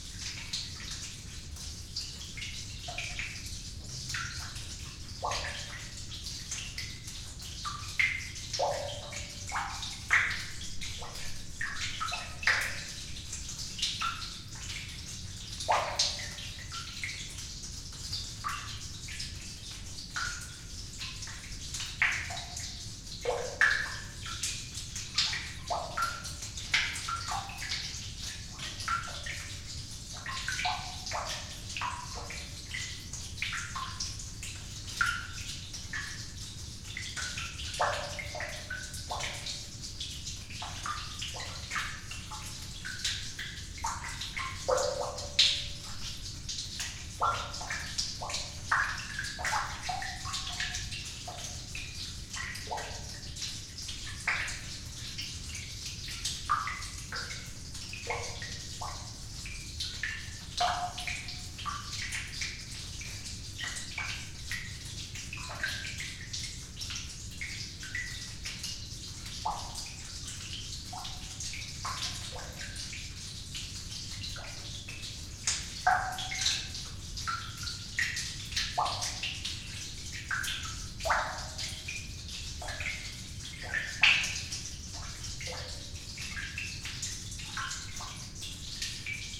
Palysiu pond, Lithuania, in the well
some well at the pond. small omni mics inside
Utenos apskritis, Lietuva, 26 April 2020